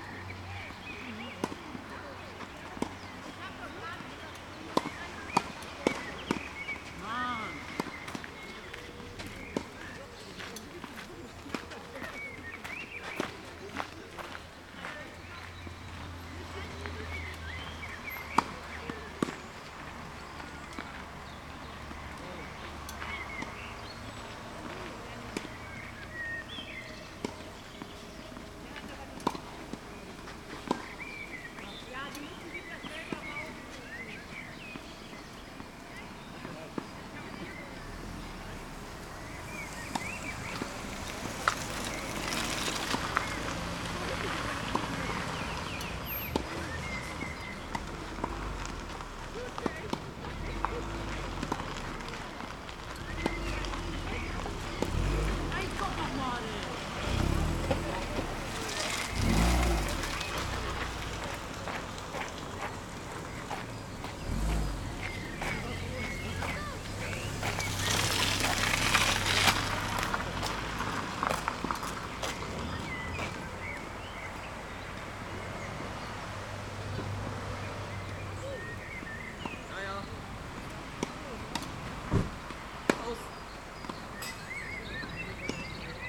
07.05.2009 Hennef, Tennisplatz, Jugendliche trainieren / tennis court, youngsters training
Hennef (Sieg), Germany, 7 May 2009